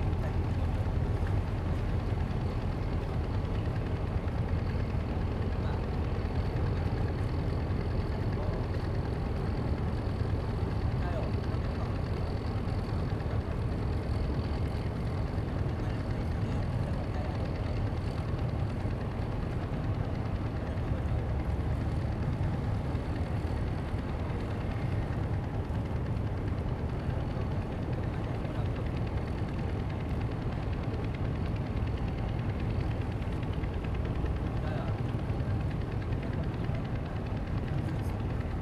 馬公港, Penghu County - In the dock
In the dock
Zoom H2n MS+XY
Magong City, Penghu County, Taiwan, 22 October 2014